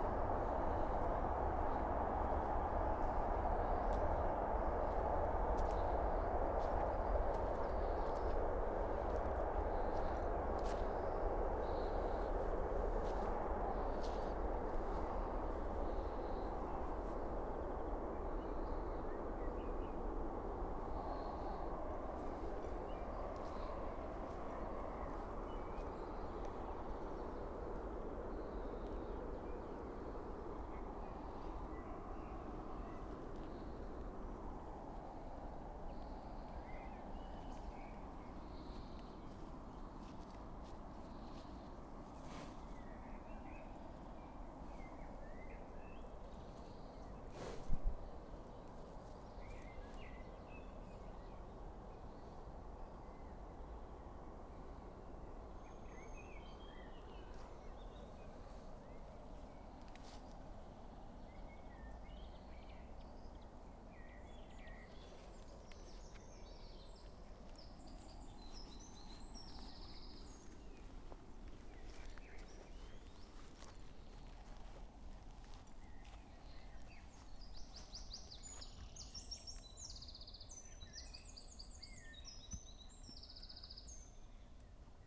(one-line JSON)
{"title": "dortmund-applerbeck, garden party, strolling - dortmund-applerbeck, garden", "description": "strolling in a park-like garden, horses nearby. finally slowly approaching a garden party.\nrecorded june 21st, 2008.\nproject: \"hasenbrot - a private sound diary\"", "latitude": "51.49", "longitude": "7.59", "altitude": "131", "timezone": "GMT+1"}